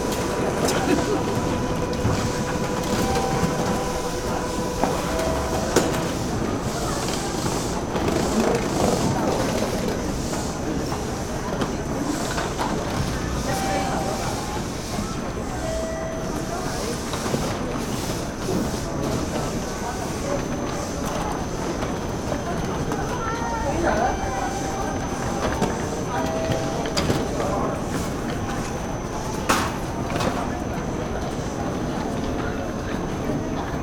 Kansai International Airport, Osaka - train and passengers